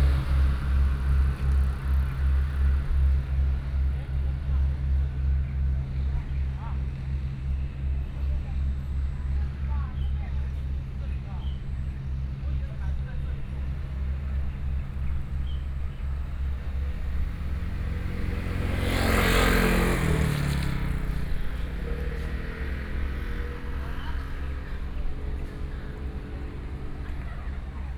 烏石港, Toucheng Township - Marina
In the nearby marina, Traffic Sound, Birdsong, Hot weather